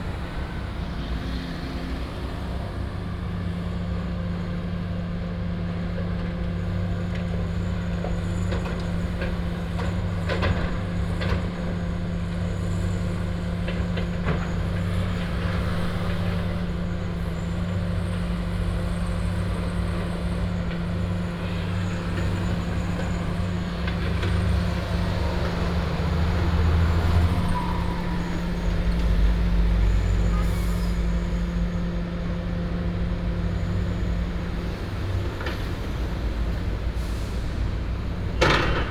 Ln., Yongmei Rd., Yangmei Dist. - Excavator
Construction site, Excavator, Traffic sound